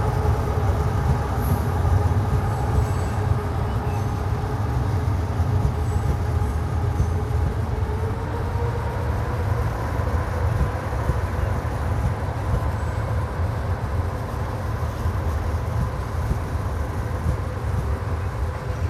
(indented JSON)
{
  "title": "Depo Nakladove nadrazi vrsovice",
  "date": "2010-06-16 16:42:00",
  "description": "nedaleko tocny na vrsovickem nakladovem nadrazi",
  "latitude": "50.06",
  "longitude": "14.46",
  "altitude": "210",
  "timezone": "Europe/Prague"
}